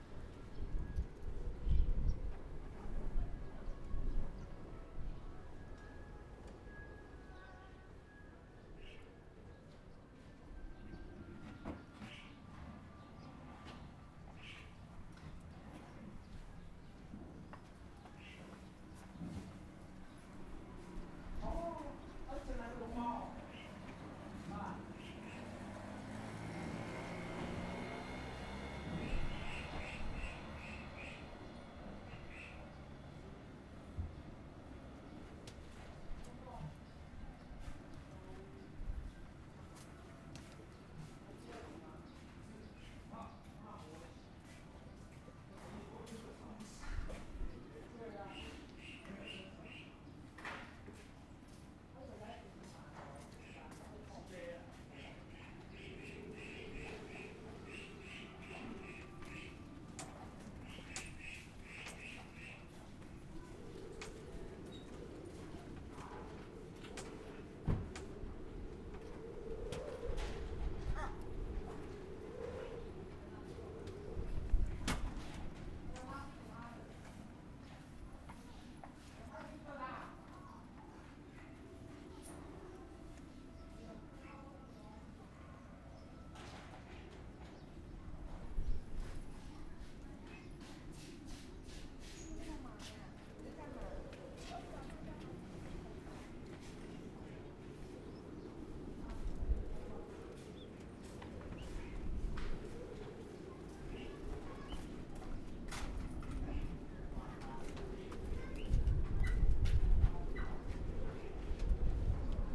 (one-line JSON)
{"title": "芳苑村, Fangyuan Township - On the streets of a small village", "date": "2014-03-09 08:18:00", "description": "The sound of the wind, On the streets of a small village\nZoom H6 MS", "latitude": "23.93", "longitude": "120.32", "altitude": "5", "timezone": "Asia/Taipei"}